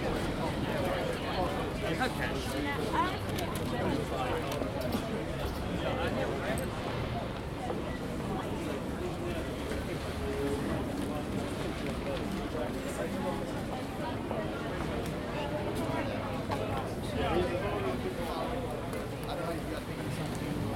Strutton Ground Market has stalls selling a variety of goods and international foods. I recorded this walking the length of the market.
13 June 2017, ~12:00